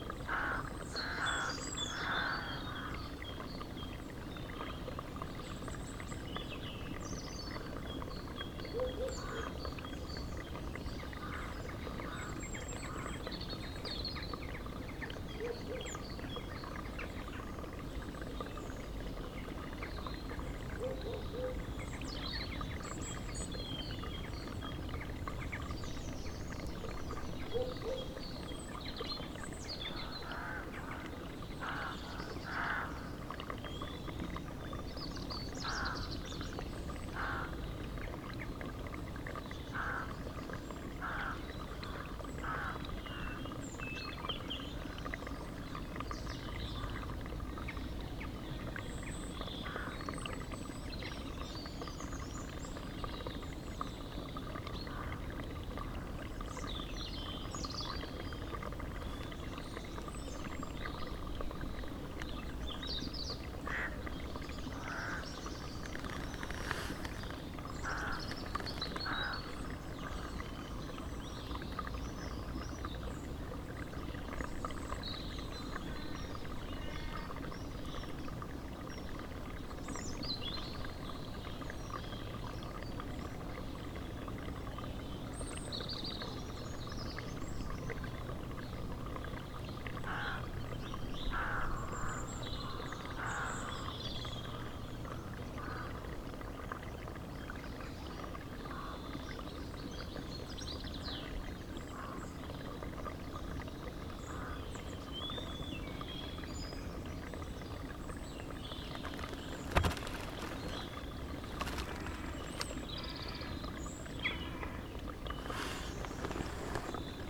outside Nottington Church mid day early spring
Dorset, UK, 27 February 2011